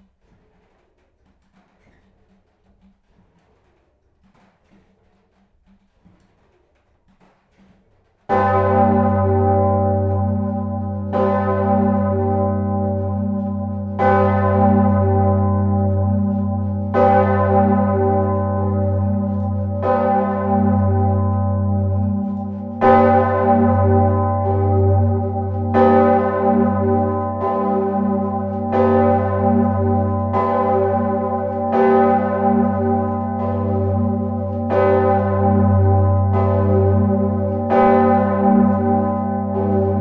The Maredsous abbey big bell, recorded inside the tower, on the Assumption of Mary day. The bells weight 8 tons and it's exceptionally ringed on this day. It was a loud beautiful sound.
A very big thanks to the broether Eric de Borchgrave, who welcomed us.